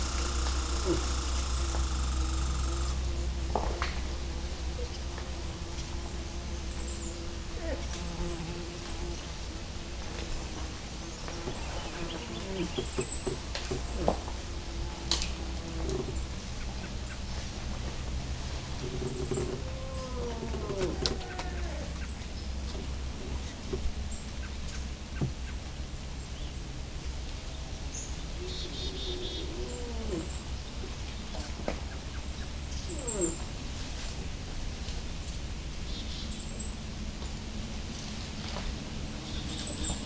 Parry Sound, Unorganized, Centre Part, ON, Canada - Moose Cow&Bull MatingSeason Oct72015 0916
Cow and Bull moose calling and moving through woods during mating season in October, 2016. Recorded at Warbler's Roost in South River, Ontario, Canada.
7 October 2015, ~09:00